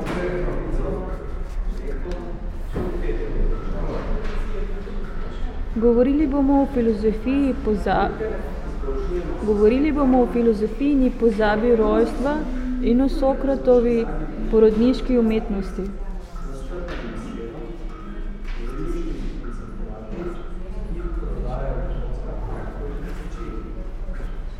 time fragment from 46m13s till 51m15s of one hour performance Secret listening to Eurydice 7 and Public reading, on the occasion of exhibition opening of artist Andreja Džakušič